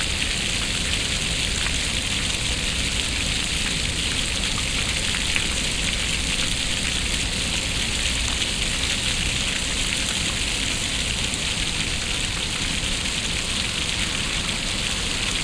Sachsenhausen-Nord, Frankfurt am Main, Deutschland - Frankfurt, Metzler Park, fountain
At a fountain inside the Metzler parc. The sound of the water fountains on a sunny summer day.
soundmap d - social ambiences and topographic field recordings